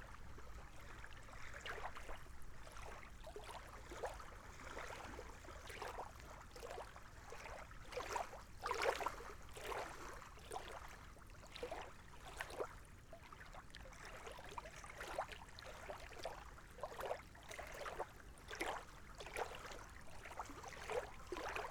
Lithuania, Mindunai, on lakeshore
evening on the lakeshore...lots of human sounds:)
July 2014